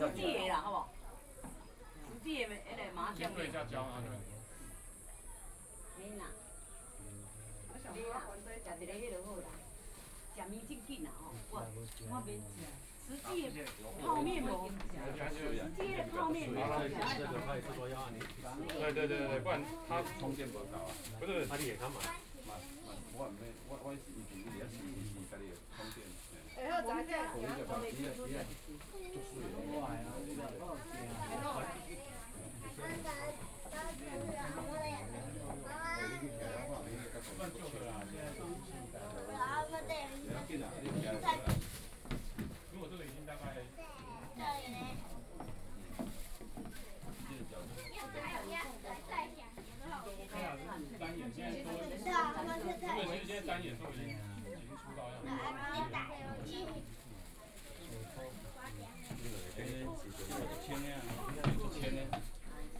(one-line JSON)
{"title": "梅花湖風景區, Dongshan Township - At the lake", "date": "2014-07-27 11:34:00", "description": "Chat, Tourist, Tourist Scenic Area, At the lake\nSony PCM D50+ Soundman OKM II", "latitude": "24.64", "longitude": "121.73", "altitude": "72", "timezone": "Asia/Taipei"}